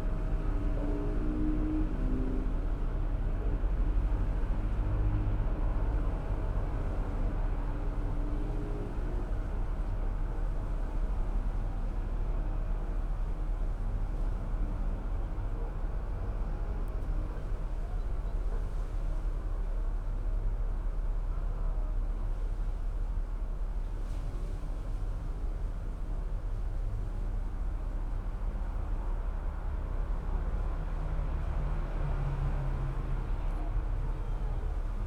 {"title": "Viale Miramare, Trieste, Italy - traffic heard in a tube", "date": "2013-09-07 18:30:00", "description": "the nasty traffic sound of nearby Viale Miramare heard through the metal tube of a traffic sign.\n(SD702, DPA4060)", "latitude": "45.67", "longitude": "13.76", "timezone": "Europe/Rome"}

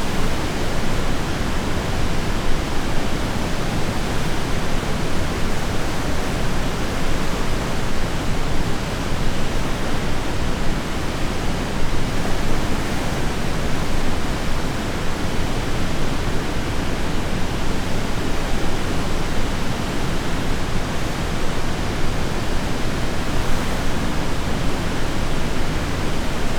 {"date": "2022-08-10 08:20:00", "description": "August 10th 2022, Uiam Dam after heavy rains", "latitude": "37.83", "longitude": "127.68", "altitude": "84", "timezone": "Asia/Seoul"}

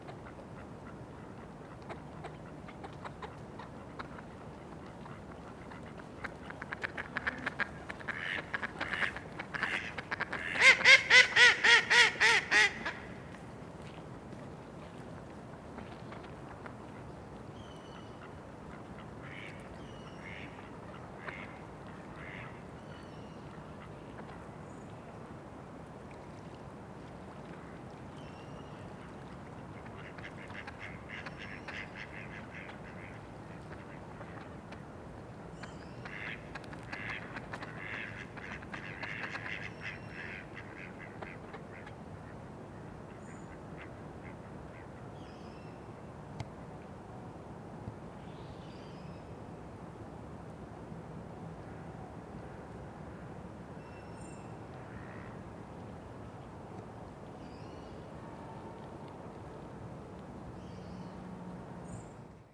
{"title": "Institut f.Geowissenschaften, Hellbrunner Str., Salzburg, Österreich - ducks talk", "date": "2022-01-02 16:24:00", "description": "ducks talk at the NAWI facultas pond", "latitude": "47.79", "longitude": "13.06", "altitude": "423", "timezone": "Europe/Vienna"}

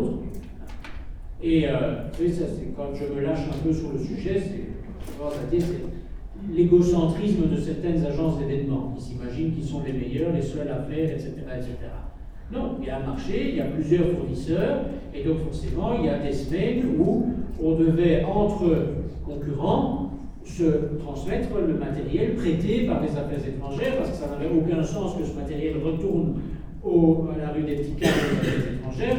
{"title": "L'Hocaille, Ottignies-Louvain-la-Neuve, Belgique - A course of politics", "date": "2016-03-18 09:00:00", "description": "In the small Pierre de Coubertin auditoire, a course of politics.", "latitude": "50.67", "longitude": "4.61", "altitude": "131", "timezone": "Europe/Brussels"}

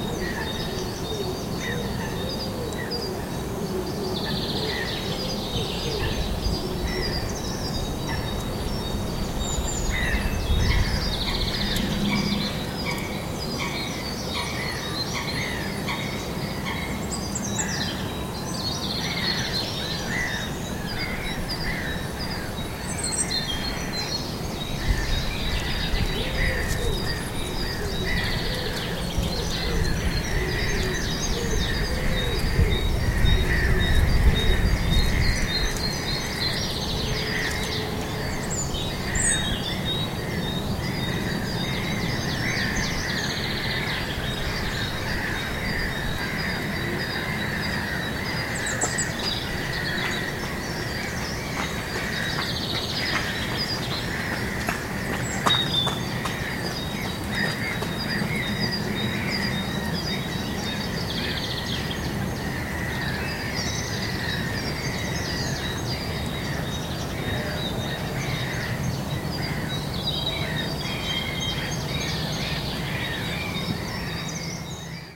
{"title": "Erlangen, Deutschland - birds at moenau forest", "date": "2013-03-09 10:42:00", "description": "Moenau forest, birds, Olympus LS-5", "latitude": "49.60", "longitude": "10.96", "altitude": "295", "timezone": "Europe/Berlin"}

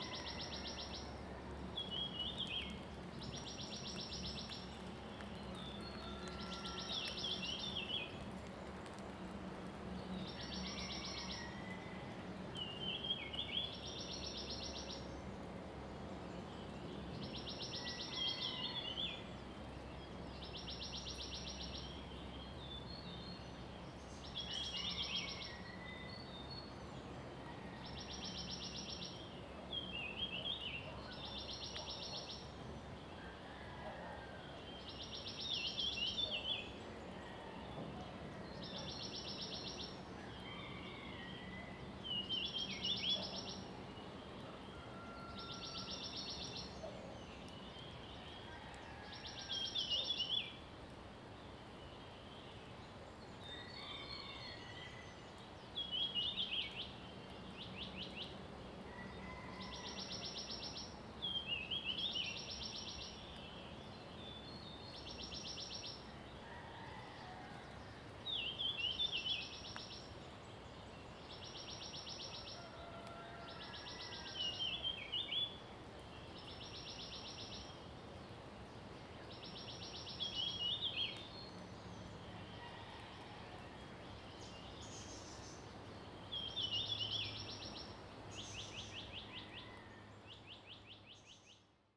{"title": "水上巷, Puli Township - Bird and Chicken sounds", "date": "2016-04-19 05:21:00", "description": "early morning, Bird sounds, Chicken sounds\nZoom H2n MS+XY", "latitude": "23.94", "longitude": "120.92", "altitude": "519", "timezone": "Asia/Taipei"}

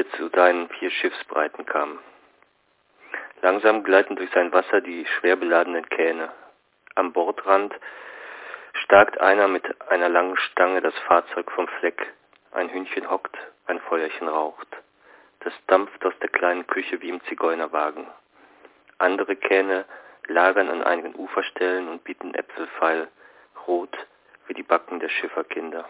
{"title": "Der Landwehrkanal (1) - Der Landwehrkanal (1929) - Franz Hessel", "latitude": "52.52", "longitude": "13.32", "altitude": "36", "timezone": "GMT+1"}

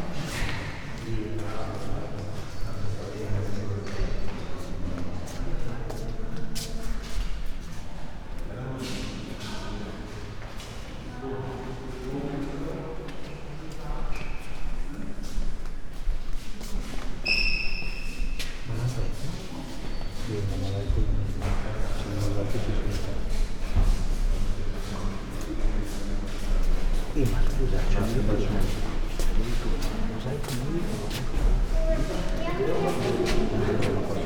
{"title": "Euphrasian Basilica, Poreč, Croatia - walk", "date": "2013-07-20 12:59:00", "latitude": "45.23", "longitude": "13.59", "altitude": "6", "timezone": "Europe/Zagreb"}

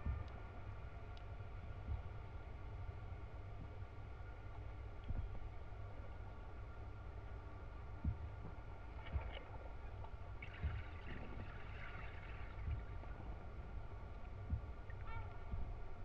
{"title": "Suezkade, Den Haag - hydrophone rec from a little platform", "date": "2009-05-08 13:53:00", "description": "Mic/Recorder: Aquarian H2A / Fostex FR-2LE", "latitude": "52.08", "longitude": "4.29", "timezone": "Europe/Berlin"}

